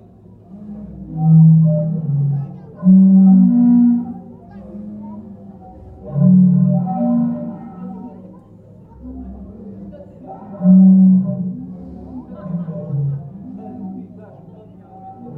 Zadar, Sea Organ
recorded manually inside the organ during a calm sea. WLD